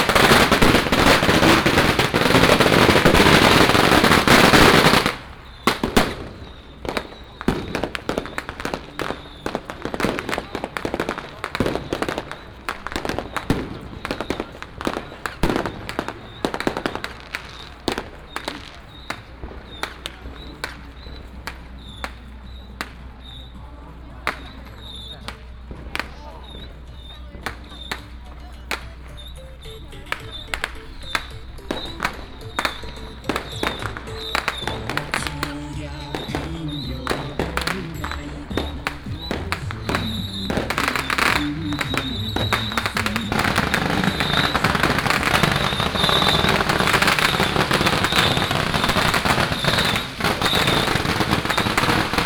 白西里, Tongxiao Township - walking in the Street
Traditional temple fair, Fireworks and firecrackers sound